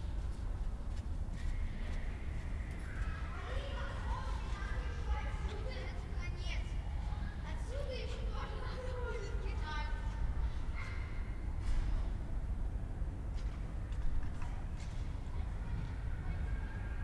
yard ambience at the newly build residential area near Jakobstr / Stallschreiberstr. A few kids playing, echos of their voices and other sounds, reflecting at the concrete walls around.
(Sony PCM D50, DPA4060)
Deutschland, 8 November 2020, ~16:00